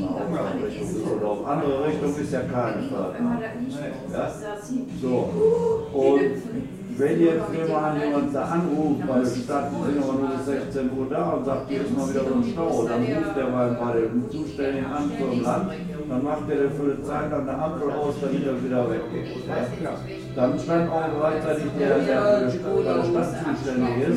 Sedansberg, Wuppertal, Deutschland - zur nordstadt
zur nordstadt, schützenstr. 100, 42281 wuppertal
Wuppertal, Germany, 17 February 2011, 19:40